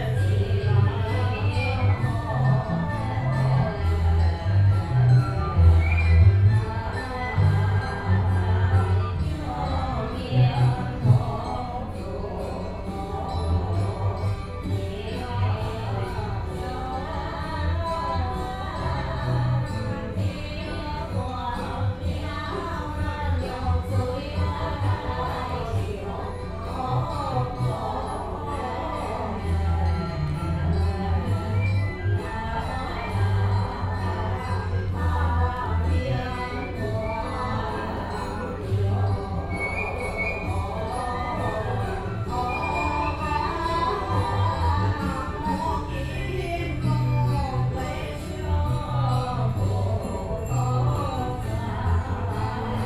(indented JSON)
{
  "title": "中正區黎明里, Taipei City - Puja",
  "date": "2014-02-28 15:43:00",
  "description": "Puja\nPlease turn up the volume a little\nBinaural recordings, Sony PCM D100 + Soundman OKM II",
  "latitude": "25.04",
  "longitude": "121.51",
  "timezone": "Asia/Taipei"
}